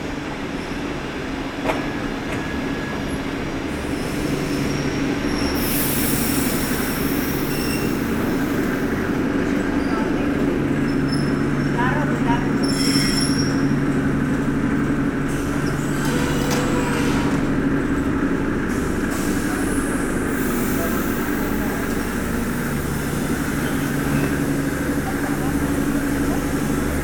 Yerevan, Arménie - Erevan train station
A train is arriving into the Erevan station, and after, I made a short walk with passengers. It's a forbidden short sound. In fact, the police caught me and asked me to stop. Train is nearly the only thing a little special in Armenia. It comes from the Soviet era.
Yerevan, Armenia, 1 September 2018